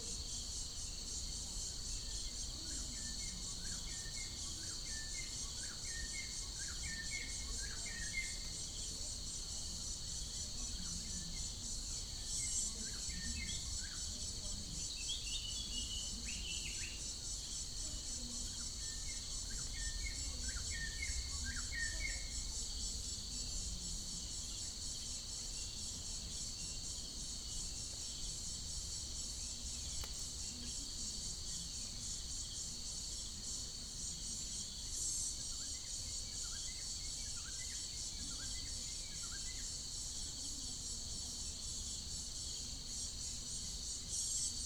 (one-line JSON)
{"title": "Maweni Farm, Soni, nr Lushoto, Tanzania - behind the house - inhabited landscape 2", "date": "2011-12-08 18:00:00", "latitude": "-4.86", "longitude": "38.38", "altitude": "1284", "timezone": "Africa/Dar_es_Salaam"}